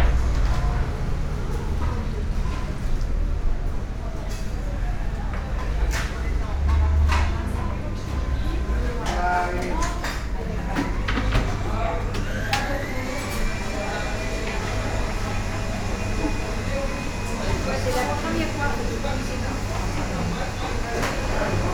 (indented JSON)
{
  "title": "Paris, Marché des Enfants Rouges, market ambience",
  "date": "2011-05-20 10:40:00",
  "description": "short walk around the roof-covered market",
  "latitude": "48.86",
  "longitude": "2.36",
  "timezone": "Europe/Paris"
}